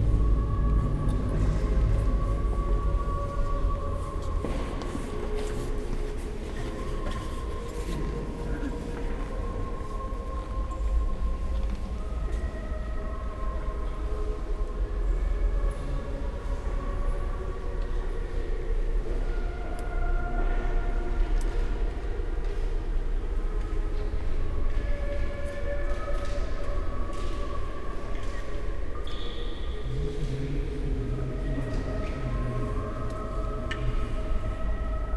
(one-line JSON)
{"title": "Dijon, France - Dijon cathedral", "date": "2017-07-29 11:30:00", "description": "Waiting in the Dijon cathedral, while a group of chinese tourists quickly visit the nave.", "latitude": "47.32", "longitude": "5.03", "altitude": "249", "timezone": "Europe/Paris"}